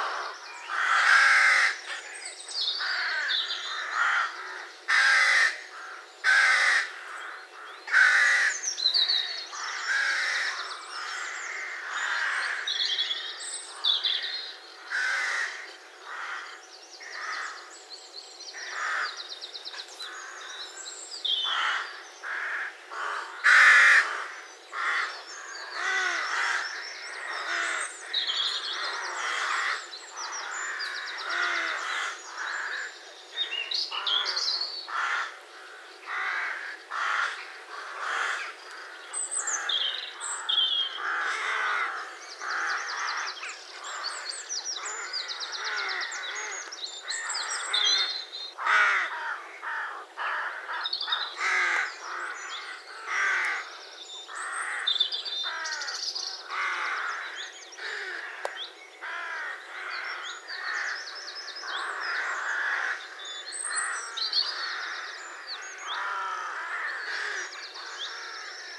Mathry, UK - Mabws Bridge Rookery
Recorded at Mabws Bridge Caravan Park using a Zoom H4 & its built in microphones. Weather conditions were good, bright, clear with just a few patches of early morning mist. Recorded around the time the Rooks were waking & before they headed off to the surrounding fields for the day.